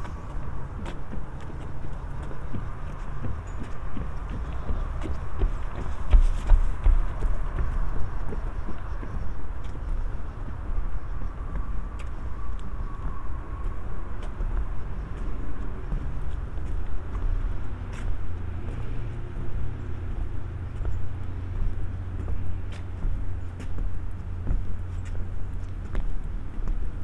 Wetlands area and elevated boardwalk, Heritage Park Trail, Smyrna, GA, USA - Traversing the boardwalk
Crossing over the wooden boardwalk at the Heritage Park Trail. Footsteps on wooden planks can be heard throughout. The zipper on my sweatshirt jangled during the walk and got picked up on the recording. A few breaths can also be heard, but I did what I could to keep myself out of the recording.
[Tascam Dr-100mkiii w/ Roland CS-10EM binaural microphones/earbuds]